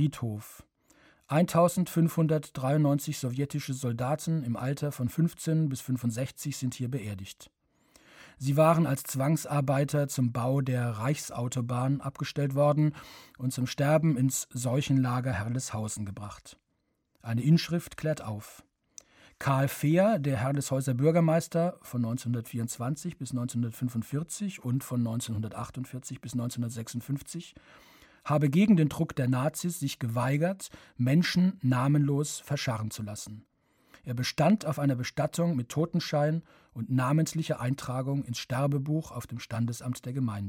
herleshausen - sowjetischer soldatenfriedhof
Produktion: Deutschlandradio Kultur/Norddeutscher Rundfunk 2009
16 August 2009, 22:24